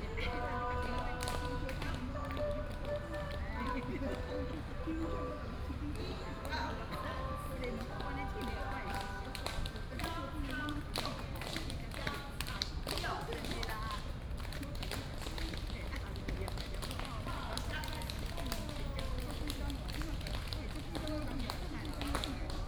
龍陣二號公園, Da’an Dist., Taipei City - in the Park
Morning in the park, Group of elderly people doing aerobics